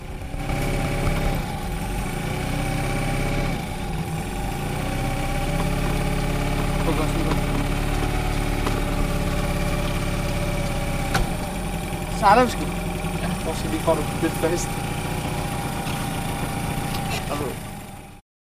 Anholt Havn, Denmark - Small boat
The recording is made by the children of Anholt School and is part of a sound exchange project with the school in Niaqornat, Greenland. It was recorded using a Zoom Q2HD with a windscreen.